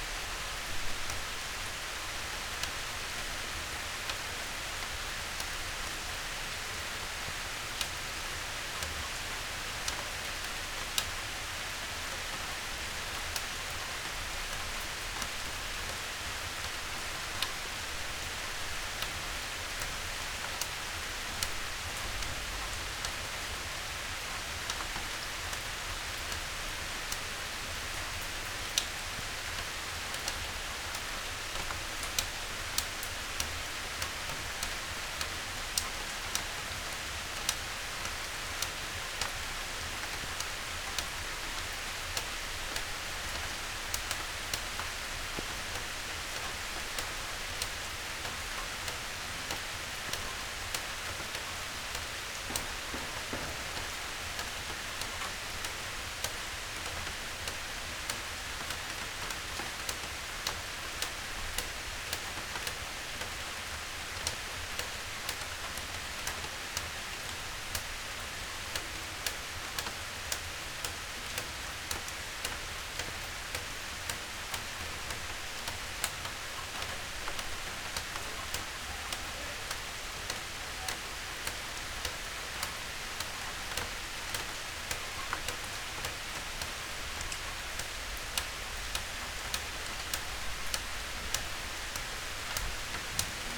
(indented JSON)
{
  "title": "Berlin Bürknerstr., backyard window - rain in backyard",
  "date": "2019-08-18 18:18:00",
  "description": "rain drops on leaves and trash bins. a memory soon? The dense vegetation in this yard will dissapear soon, replaced by terrakotta tiles. Trash cans will remain.\n(Sony PCM D50, Primo EM172)",
  "latitude": "52.49",
  "longitude": "13.42",
  "altitude": "45",
  "timezone": "Europe/Berlin"
}